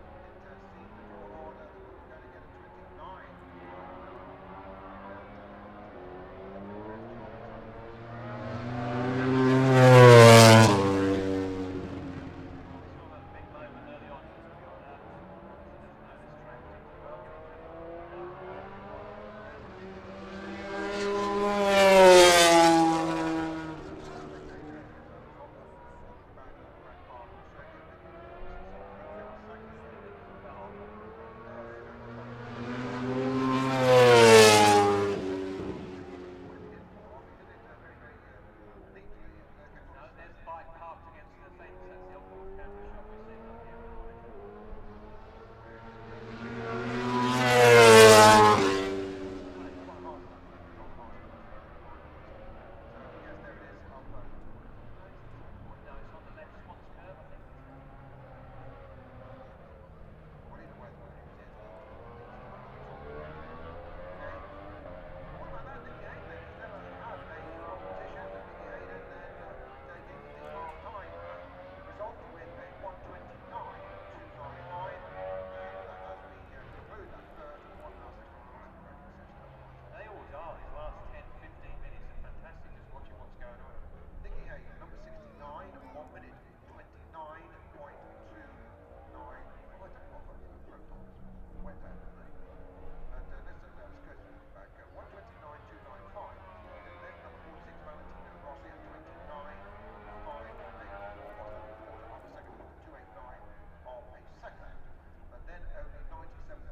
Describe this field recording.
British Motorcycle Grand Prix 2004 ... Qualifying part two ... one point stereo to minidisk ...